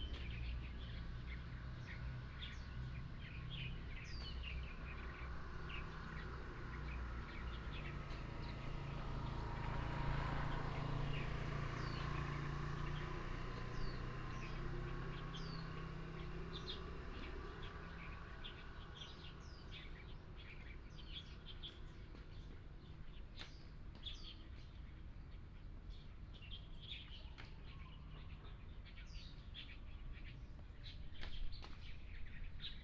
空軍廿二村, North Dist., Hsinchu City - Under the big banyan tree
Under the big banyan tree, Baseball sound, The sound of birds, Binaural recordings, Sony PCM D100+ Soundman OKM II